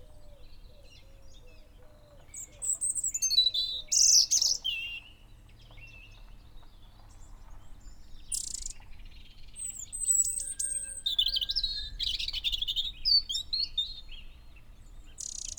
Off Main Street, Helperthorpe, Malton, UK - robin song ...
robin song ... zoom h5 and dpa 4060 xlr ... lav mics clipped to twigs ... bird calls ... song ... blackbird ... crow ... blue tit ... pheasant ... wren ... song thrush ... background noise ...